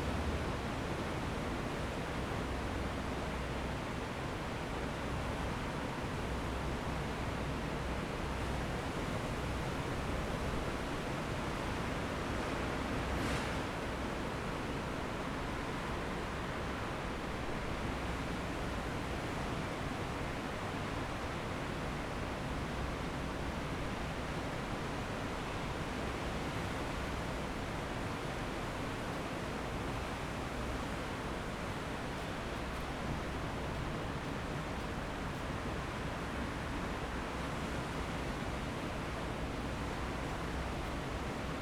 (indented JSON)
{
  "title": "Wai'ao, Toucheng Township - Sitting on the coast",
  "date": "2013-11-08 13:20:00",
  "description": "Sitting on the coast, Sound of the waves, Workers are mixing cement, Traffic noise behind, Binaural recordings, Zoom H4n+ Soundman OKM II",
  "latitude": "24.88",
  "longitude": "121.85",
  "altitude": "9",
  "timezone": "Asia/Taipei"
}